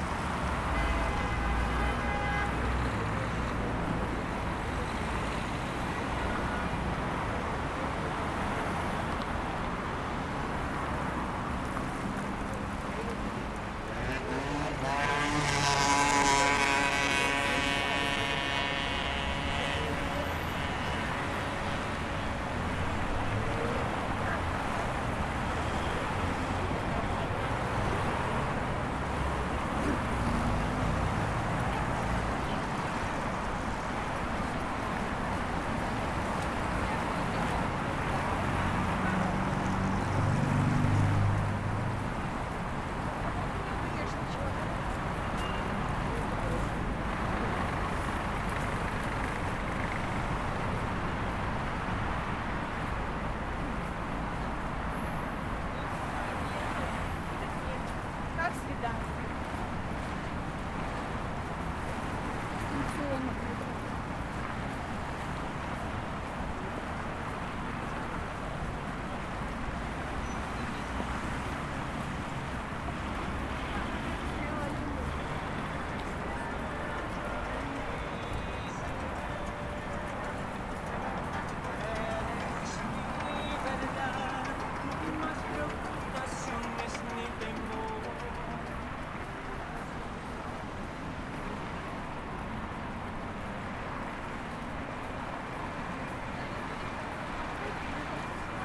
Ligovsky Ave, Sankt-Peterburg, Russia - Walking along Ligovksy Avenue
Walking along Ligovksy Avenue in the afternoon with a Zoom H4N Pro, recording traffic, passersby and shops holding horizontally pointing in front of me
Северо-Западный федеральный округ, Россия, November 2019